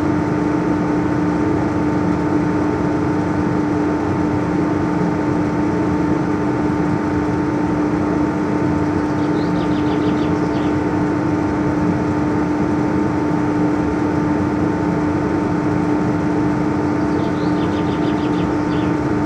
the city, the country & me: may 6, 2011
Solingen, Germany, 6 May 2011, 11:51